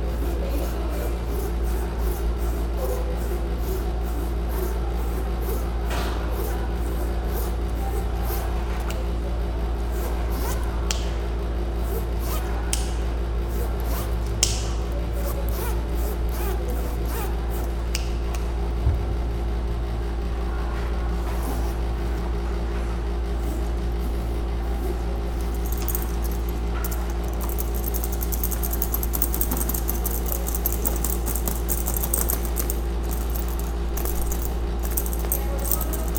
Cachoeira, Bahia, Brazil - O ar da sala
Grava;áo realizada com PCM dentro da sala de aula com o som do ar condicionado e o barulho de uma bolsa se abrindo.